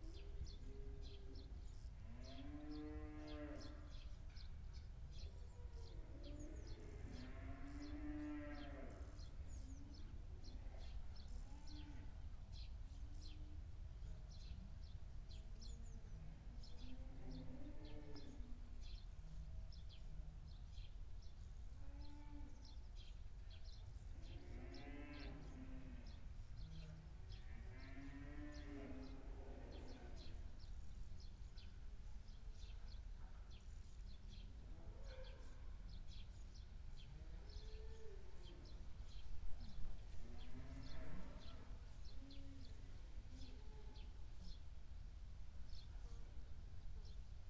it heidenskip: ursuladijk - the city, the country & me: howling cows
howling cows in the stable, car passing by
the city, the country & Me: july 11, 2015
It Heidenskip, Netherlands, 11 July 2015